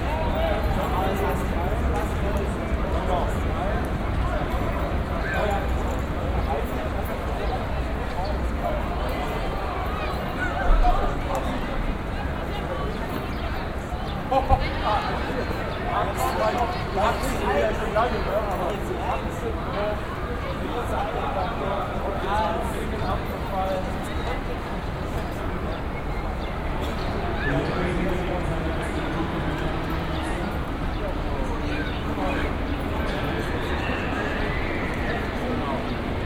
alexanderplatz, tv tower, 1.floor over rickshaw taxi stand
urban soundtrack at alexanderplatz, 1st floor above the rickshaw taxi stand. sounds from various sources - pedestrians, metro tram, train - changing at different speeds.
14.06.2008, 18:20